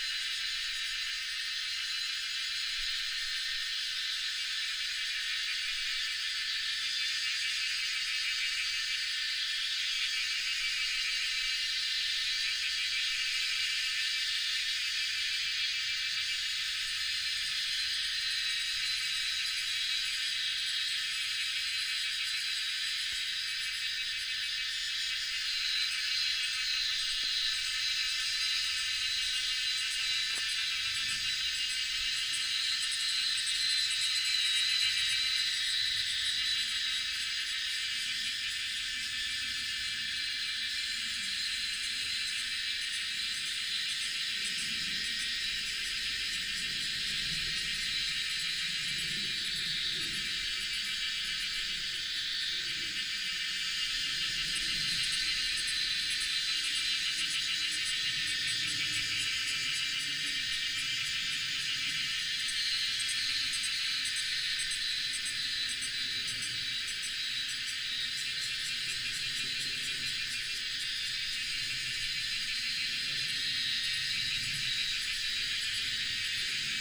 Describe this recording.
Cicadas cry, Binaural recordings, Sony PCM D100+ Soundman OKM II